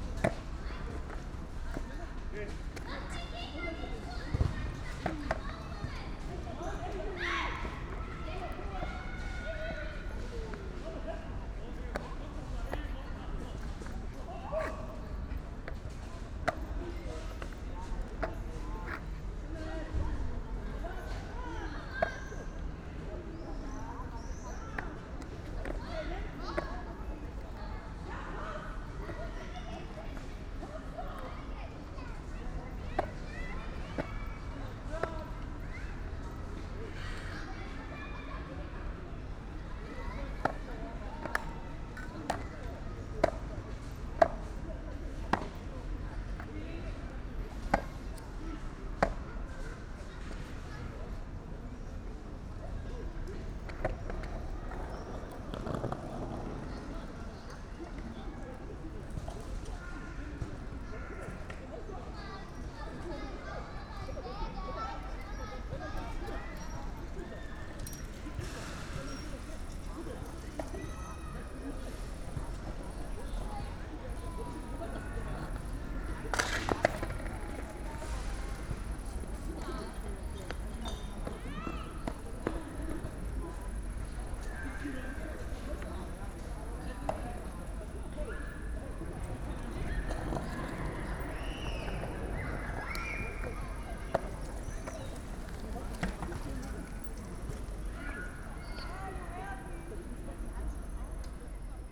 Zickenplatz, Schönleinstraße, Berlin, Deutschland - public square evening ambience near playground
evening ambience at Zickenplatz, Berlin Kreuzberg /w girl practising skateboard, someone makeing a phone call, youngsters playing streetball, bikes passing by, distant traffic and a cricket
(Sony PCM D50, Primo EM272)